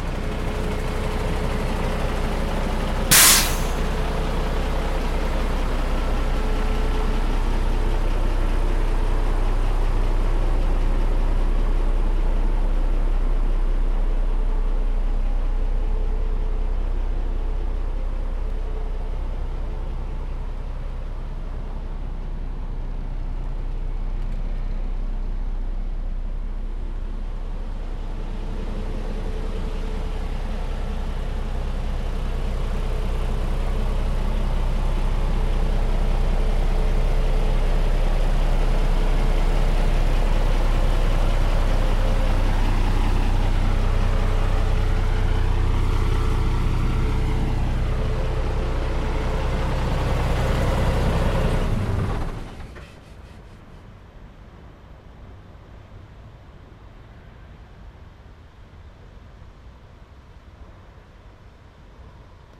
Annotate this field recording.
Closed train station, parking, Lodz, author: Aleksandra Chciuk